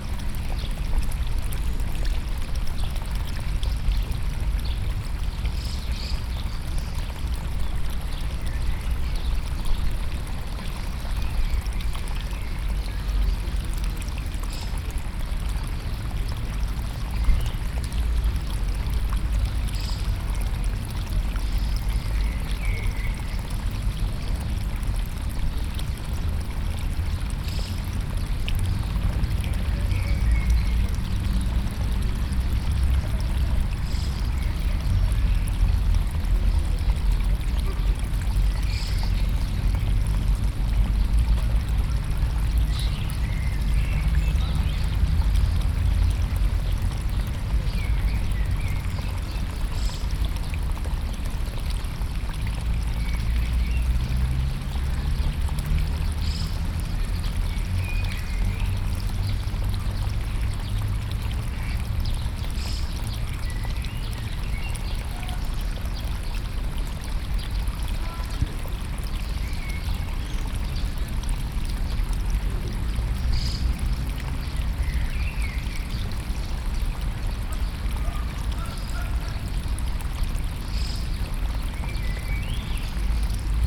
Norway, Oslo, Botanisk hage, water, The University Botanical Garden at Tøyen in Oslo is Norways oldest botanical garden, established in 1814. It is administrated by the University of Oslo.
The University of Oslos oldest building, the Tøyen Manor which was given as a gift in 1812, is located in the garden. The garden originally covered 75,000 square metres, but has since doubled in size. The collection includes roughly 35,000 plants of about 7500 unique species., binaural
Oslo, Norway